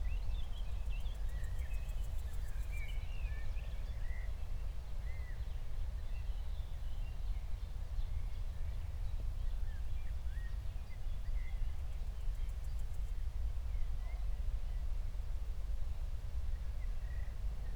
14:00 Berlin, Buch, Mittelbruch / Torfstich 1